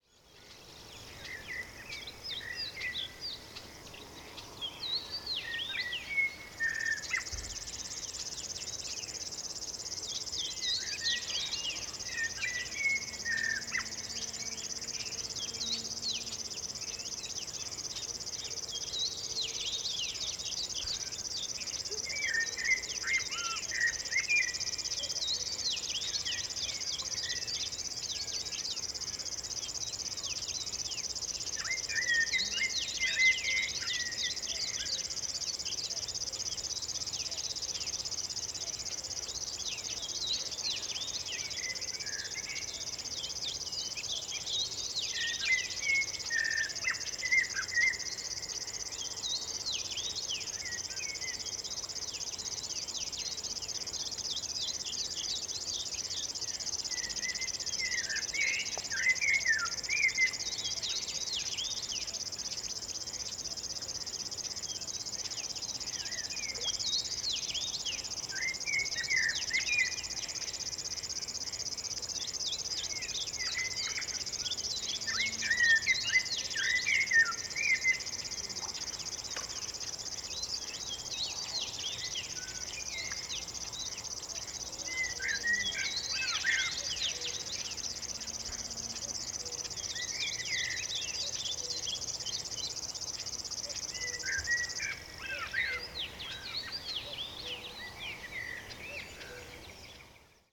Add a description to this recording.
evening ambience by the bridge